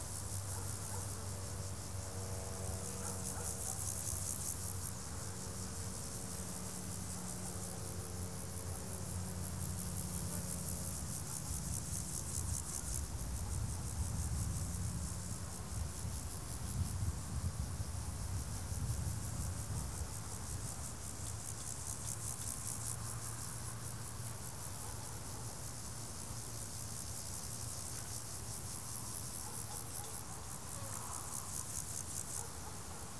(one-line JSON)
{"title": "Morasko, Deszczowa Rd. - flight before noon", "date": "2015-08-09 11:27:00", "description": "a small plane flying over the meadow. cricket chirping everywhere. some sounds of the city reach this place, inevitable traffic noise from a nearby street. dogs baying.", "latitude": "52.47", "longitude": "16.91", "altitude": "95", "timezone": "Europe/Warsaw"}